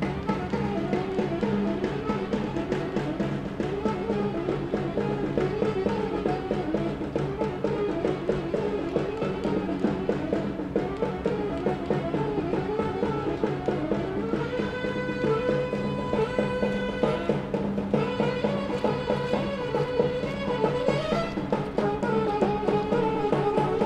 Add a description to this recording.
Every year around Christmas time all sorts of makeshift bands travel the city playing repetitive patterns (they pretend they are doing traditional rhythms or carols but far from the truth) and hoping for passers by and people living in apartment blocks to give them money. Recorded with Superlux S502 Stereo ORTF mic and a Zoom F8 recorder.